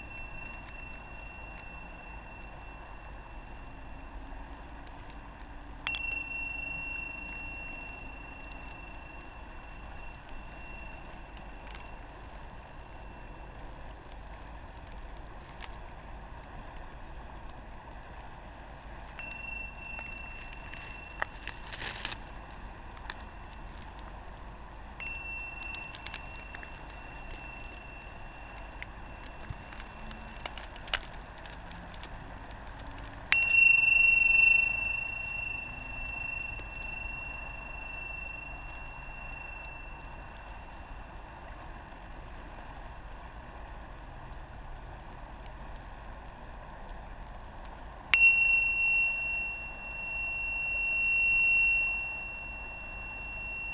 dvorjane, drava river - power line, metal bell, stones, river
Starše, Slovenia, 9 August 2015